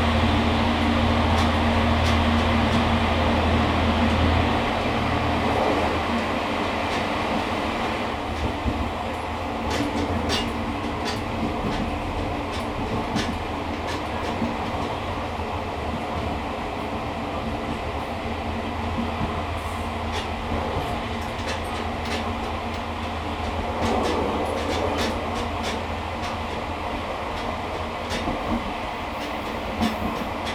內獅村, Shihzih Township - Chu-Kuang Express
Chu-Kuang Express, Train in the mountains, Inside the train
Zoom H2n MS+XY
Pingtung County, Shi-Zi Township, 屏147-1鄉道, September 2014